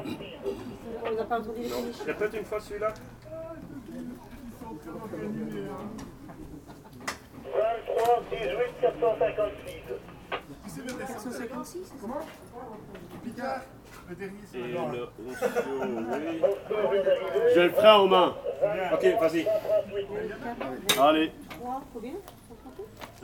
Mont-Saint-Guibert, Belgique - Soapbox race
A soapbox race. This is the departure lane of this race. Gravity racer are slowly beginning to drive.
Mont-Saint-Guibert, Belgium, 13 September 2015